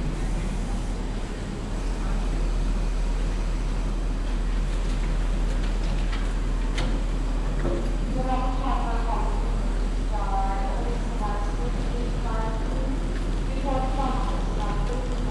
muenster, main station, track - muenster, bahnhof, gleis 12
soundmap nrw: social ambiences/ listen to the people - in & outdoor nearfield recordings
hier - bahnhof ambiencen
24 April 2008, 21:25